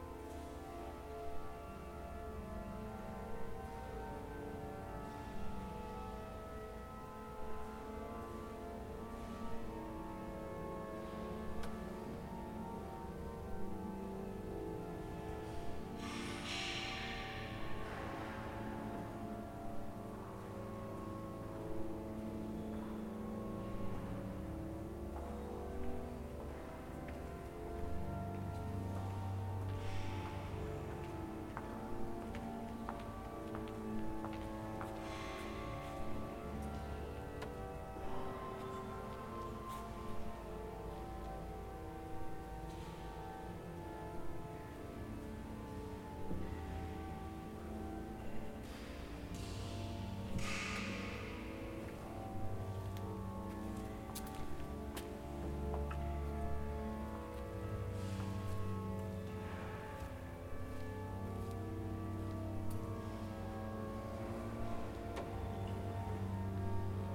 Venice, Italy - Inside a church
organ music playing, noises from people waking inside the reveberant space of a church